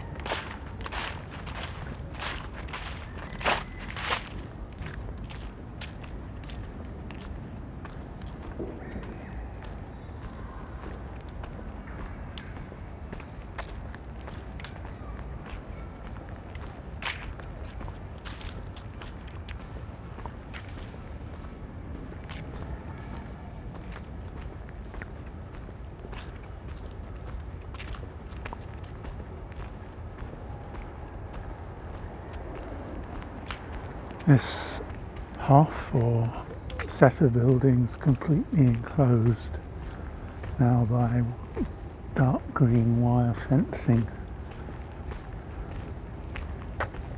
Lobeckstraße, Berlin, Germany - Open Hinterhof walk, Jay squawks, a maze of fences, dry leaves to traffic
This recording covers the walk from the spot marked on the map, through the spaces between apartments blocks to busy Princenstrasse. Jays squawk in the tree tops and my footsteps shuffle through dry fallen leaves. There are distance shouts from the nearby Lobecksportsplatz, which always seems busy. These green spaces between the buildings were once completely accessible. However they are now crossed by a maze of dark green wire fences. It's often hard, if not impossible, to find a way through. On this occasion I had to almost retrace my steps to get out.